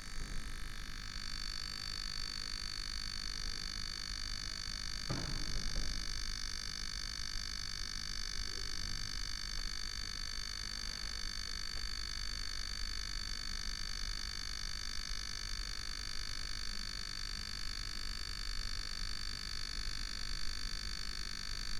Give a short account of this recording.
Berlin Bürknerstr., house entrance, defective electric device buzzing, (Sony PCM D50, Primo EM172)